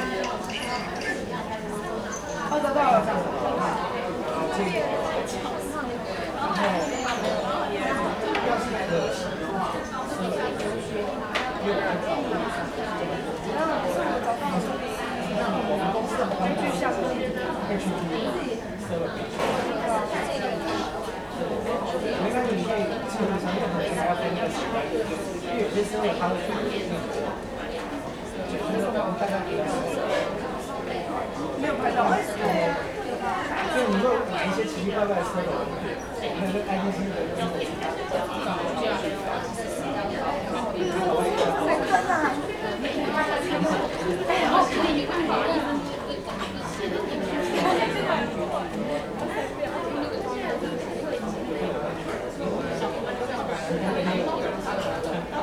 Da'an District, Taipei - Eslite Bookstore
信義區, 台北市 (Taipei City), 中華民國, 13 July